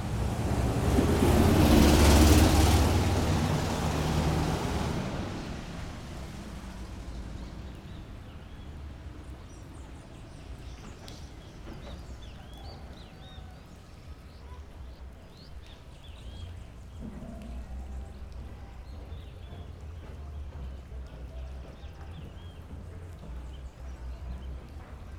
São Félix, Bahia, Brazil - De baixo da Ponte Dom Pedro I
Gravei este áudio numa manhã embaixo da ponte de metal que liga Cachoeira a São Félix, capitação entre a ponte e o rio.
Gravado com o gravador Tascam D40
por Ulisses Arthur
Atividade da disciplina de Sonorização, ministrada pela professora Marina Mapurunga, do curso de cinema e audiovisual da Universidade Federal do Recôncavo da Bahia (UFRB).
15 March 2014, 05:30